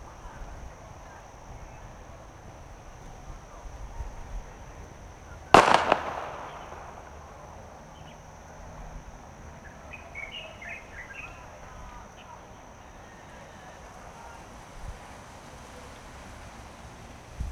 Shueilin Township, Yunlin - Small town
雲林縣(Yunlin County), 中華民國, 23 January 2012, ~17:00